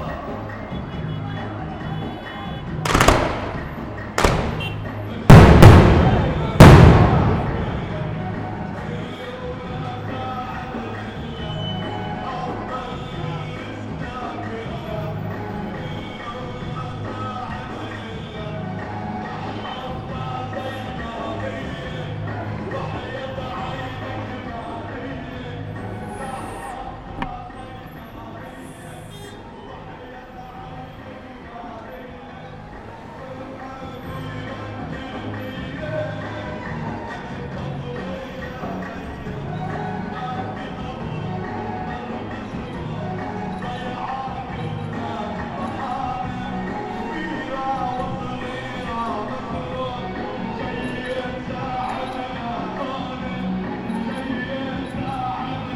LEVIT Institute, Tripoli, Libanon - Rafik Hariri Day
Recorded with a PCM D-100 - celebrations of Rafik Hariri